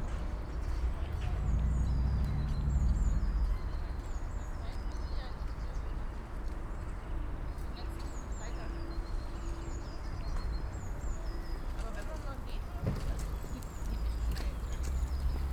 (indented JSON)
{
  "title": "Walter-Friedrich-Straße, Berlin-Buch - river panke, morning ambience",
  "date": "2019-03-27 07:50:00",
  "description": "morning ambience near river Panke (inaudible), see\n(Sony PCM D50, DPA4060)",
  "latitude": "52.63",
  "longitude": "13.49",
  "altitude": "54",
  "timezone": "Europe/Berlin"
}